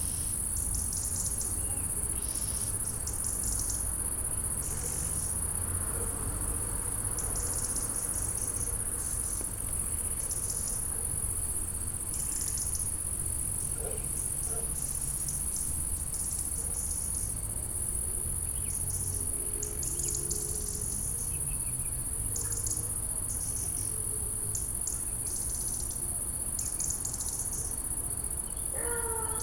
chemin du golf, Viviers-du-Lac, France - ambiance du soir
Chemin du Golf stridulations d'insectes cliquetis de rouges_gorges das la pénombre. Circulation en arrière plan, léger vent de nord.